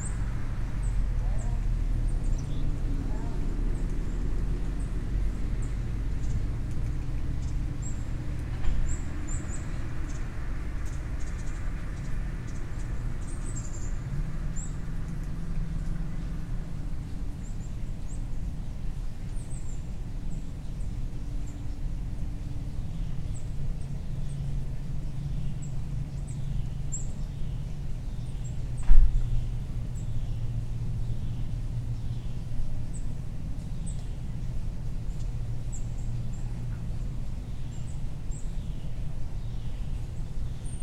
Glendale Ln, Beaufort, SC, USA - Neighborhood Ambiance
A recording taken on the doorstep of a house. Many birds are heard throughout the recording. A neighbor's dog begins to bark at 05:30. Human activity is heard throughout the neighborhood, including vehicles and people talking.
[Tascam DR-100mkiii & Primo EM-272 omni mics]